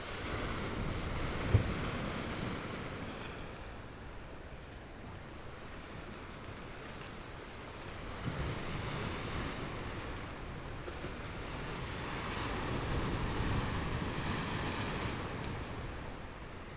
Sound of the waves
Binaural recordings
Zoom H4n+ Soundman OKM II + Rode NT4